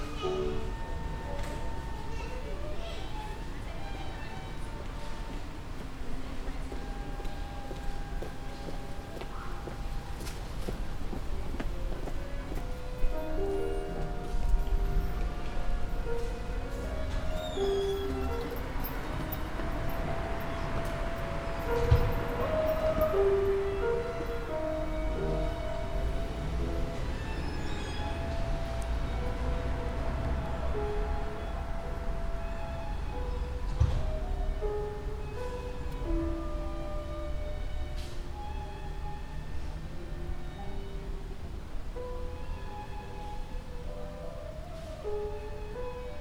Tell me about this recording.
sounds collected outside the music school in Frankfurt Oder